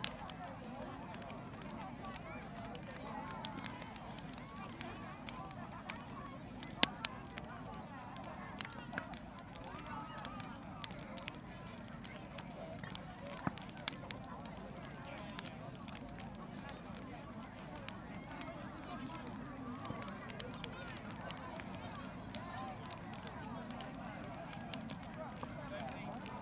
South Stoke, Oxfordshire, UK - South Stoke Bonfire
A large crowd are drawn to the bonfire constructed as part of 'South Stoke Fireworks Spectacular'. Recorded using the built-in microphones on a Tascam DR-05.